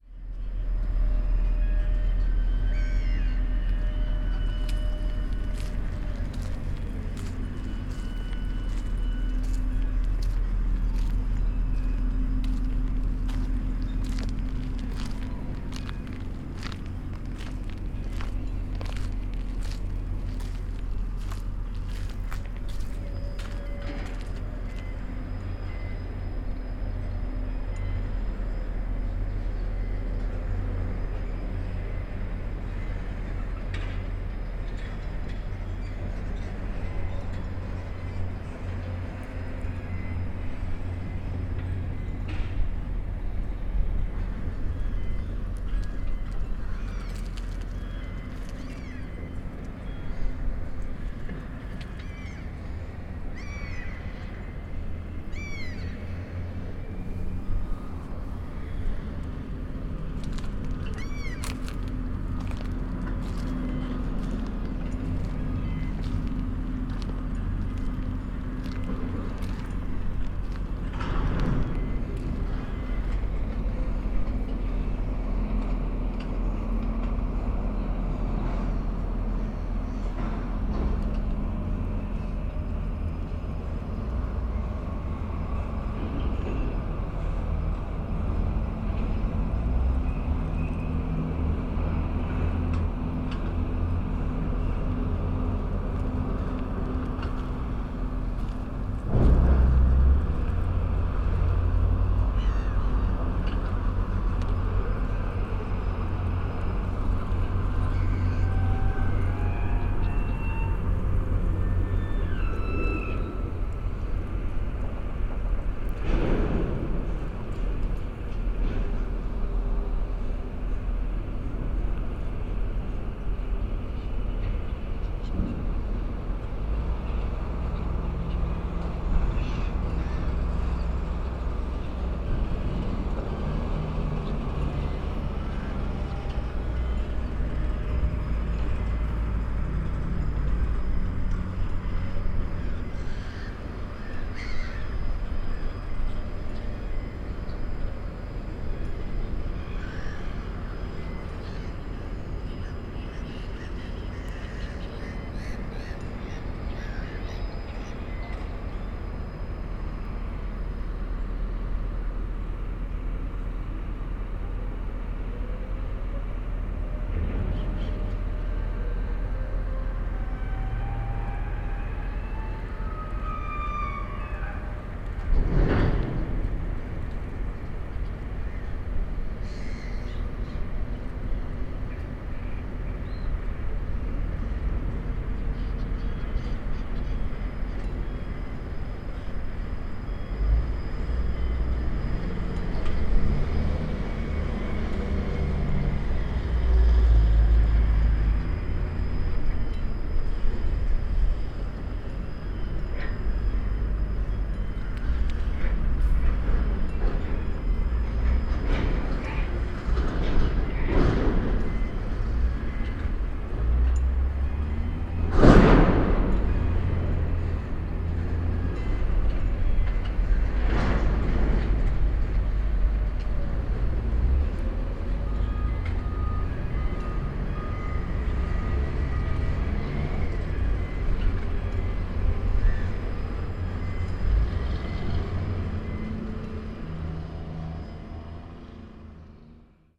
container harbour Köln Niehl, early evening harbour ambience, the scrapyard is quiet, workers have left. distant sounds of the container terminal vis-a-vis
(Sony PCM D50, DPA4060)